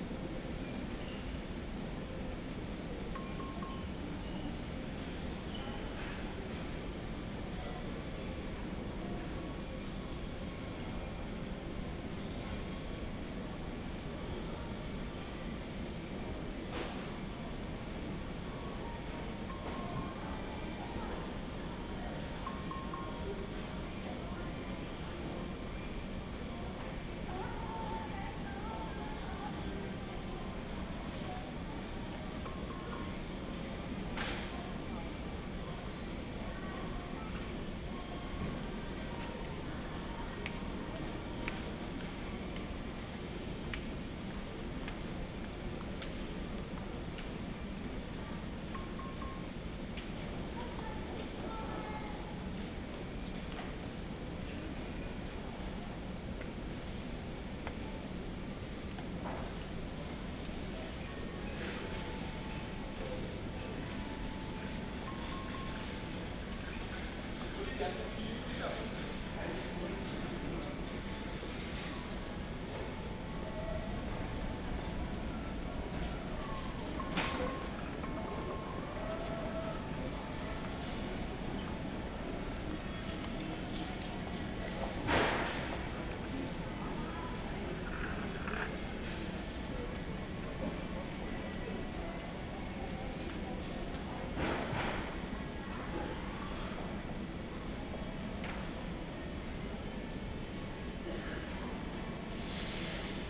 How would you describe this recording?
several touch screens for product browsing, it seems as if someone is knocking on the inside of the screen, the city, the country & me: june 16, 2010